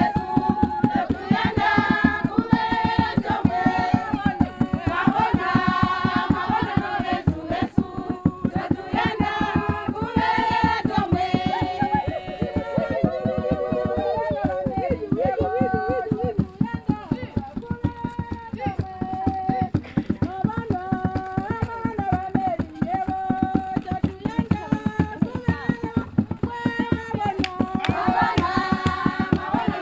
Mweezya Primary School, Sinazongwe, Zambia - Mwabonwa! Welcome song....
...we are Mweezya Primary School, gathered under some trees. Today, we are meeting two local women groups, the Mweezya and Mweka Women’s Clubs. Mary Mwakoi from Community Development introduced us to the women. The Women are welcoming us with a song. Together with Monica and Patience from Zongwe FM, we are making recordings for our upcoming live shows….
23 August 2016, 10:30